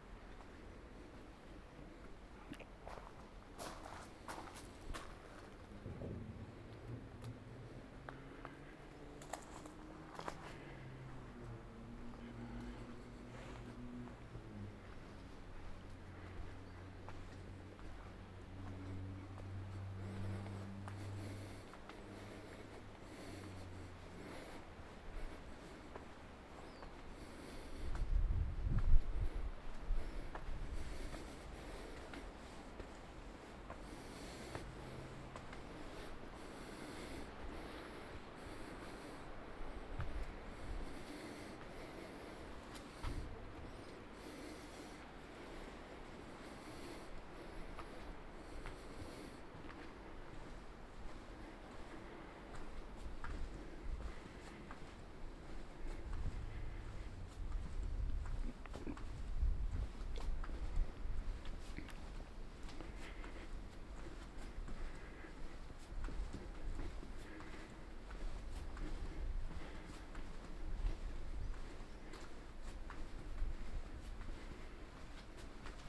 Passeig de la Sardana, Portbou, Girona, Spagna - PortBou walk day3
Walk day on the trace of Walter Benjamin: same path as previous nigh walking (ee here) of previous night. start at Port Bou City Library at 19:20 p.m. of Thursday September 28 2017; up to Memorial Walter Benjamin of Dani Karavan, enter the staircases of the Memorial, crossing friends visiting the memorial, slow walk into the cemetery, sited on external iron cube of Memorial, in front of sea and cemetery, back to village.